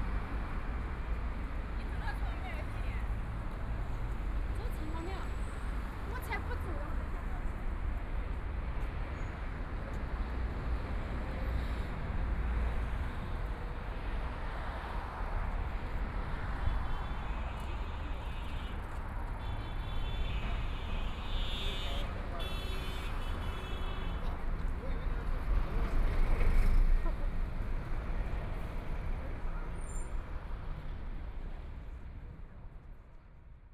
Fuzhou Road, Shanghai - Bells
In the corner, Traffic Sound, Binaural recording, Zoom H6+ Soundman OKM II